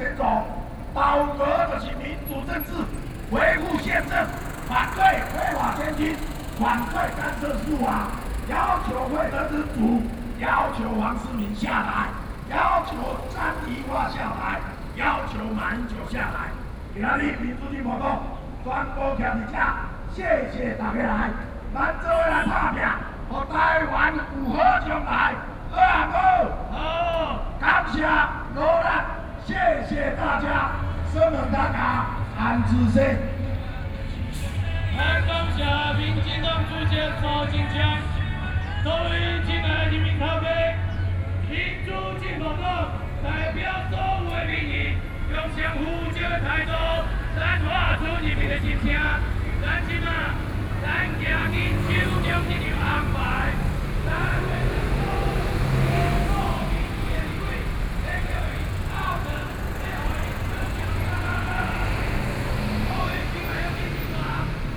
100台灣台北市中正區幸福里 - Protest Speech
Opposite side of the road, Opposition leaders, Speech shows that the Government is chaos, Binaural recordings, Sony PCM D50 + Soundman OKM II